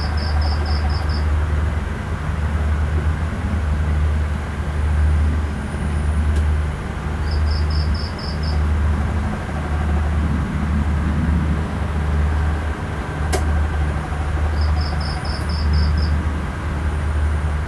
Koh Lipe, Thailand - drone log 01/03/2013
fan, cicada, distant fishing boat engine at night
(zoom h2, binaural)
1 March, 03:10